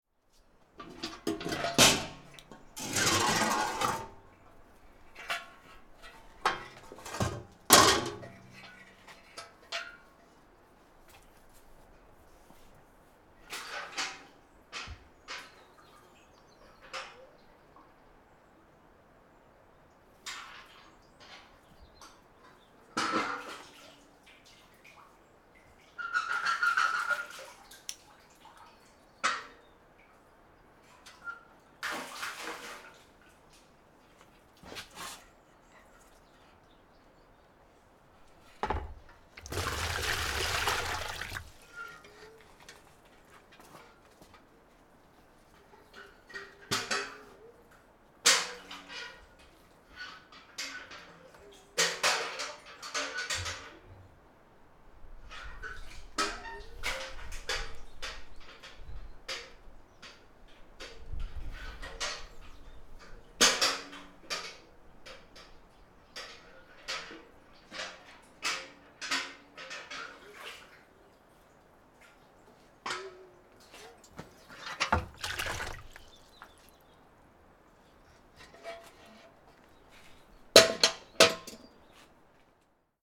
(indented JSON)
{
  "title": "stromboli, ginostra - water cisterne",
  "date": "2009-10-20 13:50:00",
  "description": "getting water from the cisterne in front of the house",
  "latitude": "38.79",
  "longitude": "15.19",
  "altitude": "94",
  "timezone": "Europe/Rome"
}